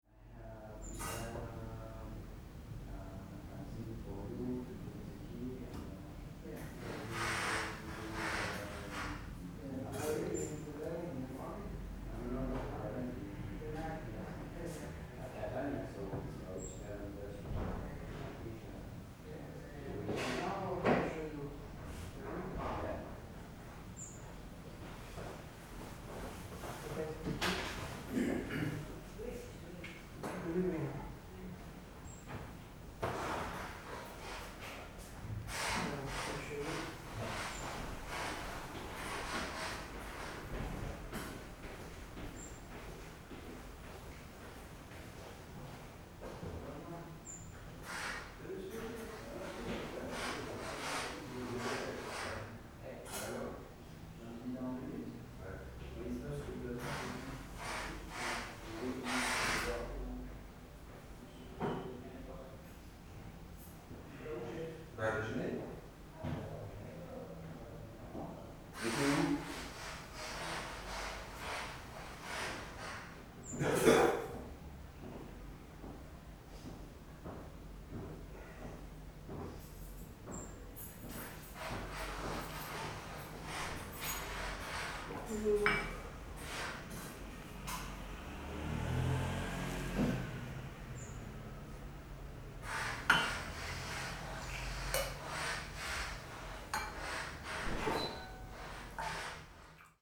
taormina, villa nettuno - dining area
mysterious hotel, in questionable shape, patina of former glory. the old lady works in the kitchen.
Taormina ME, Italy, 25 October 2009